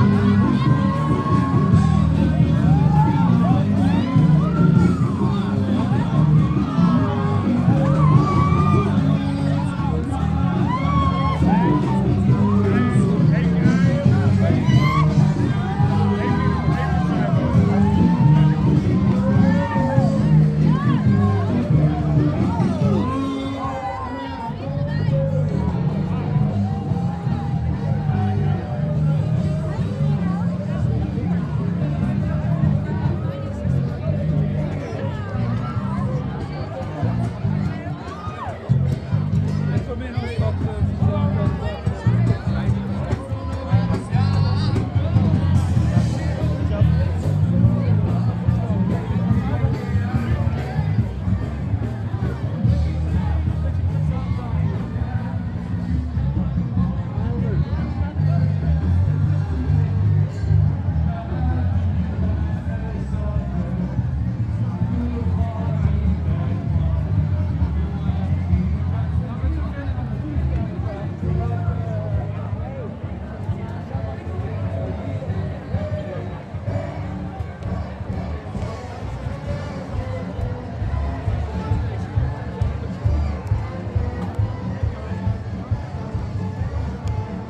Parade - Parade 2010
Impression of the Parade, a anual 10 day theatre festival.
Zoom H2 recorder
The Hague, The Netherlands